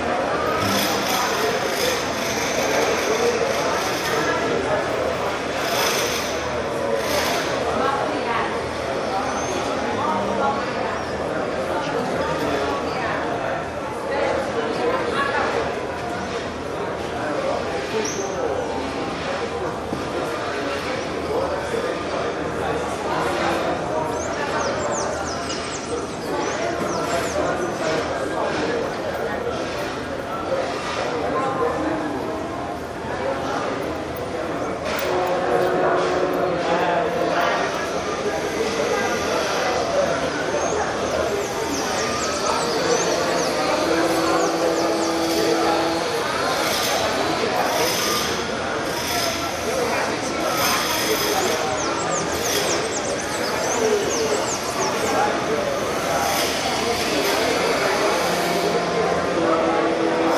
Urca - RJ. - Embaixo da árvore
A espera da aula de apreciação musical na Escola Portátil de Música, UNIRIO.
Waiting music appreciation class at the Escola Portátil de Música, UNIRIO.